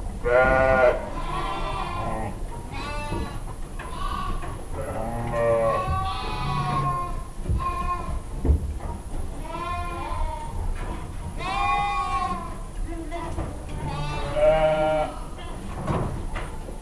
Mas-Saint-Chély, France - 3 o'clock in the barn
It's 3AM. The night is very cold. I'm trying to sleep in the barn. Lambs were recently removed to another cowshed. Alls sheeps are shooting, because of the removed lambs. There's no moment it stops. How do these animals find a breather ?